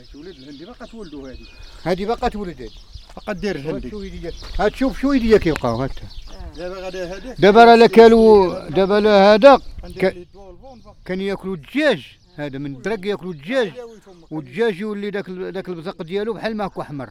Laâssilat, Maroc - Habitants parlent du fléau des cochenilles
Des habitants du hameau parlent de l'arrivée du fléau des cochenilles. Les animaux les mangent et deviennent rouge.
Son pris par Kaïs et Mina.
Nnass mn lhameau kay char7o 3ala lmossiba dial 7achara. Al7ayawan kay yakolhom o kay weli 7mar.